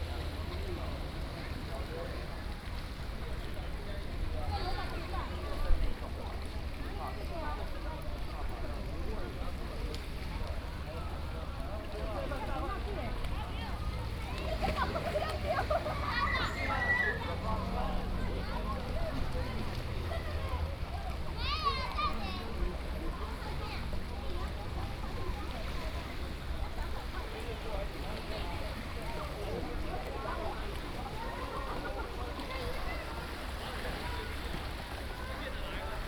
海興海水游泳池, Keelung City - Seawater pool
Traffic Sound, Seawater pool
Keelung City, Taiwan, 2 August, ~16:00